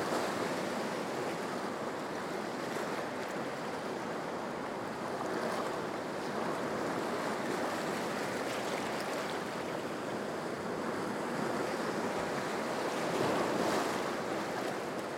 Rue Arlette Davids, Wissant, France - Wissant (Pas-de-Calais - côte d'Opale)
Wissant (Pas-de-Calais - côte d'Opale)
Belle journée ensoleillée
C'est marée descendante. La mer joue encore entre les rochers et les galets
ZOOM F3 + Neumann KM 184